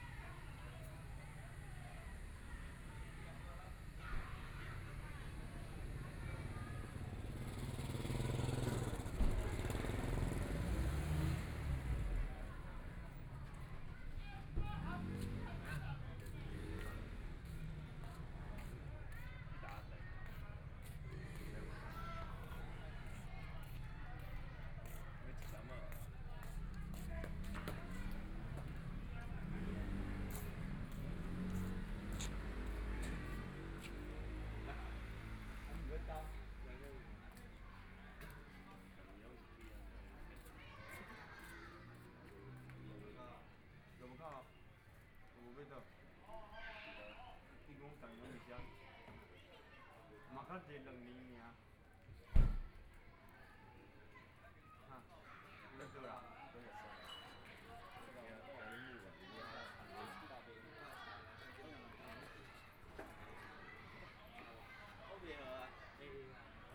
蕃薯村, Shueilin Township - in front of the temple
The plaza in front of the temple, Very many children are playing games, Firecrackers, Motorcycle Sound, Zoom H4n+ Soundman OKM II
Shuilin Township, 雲151鄉道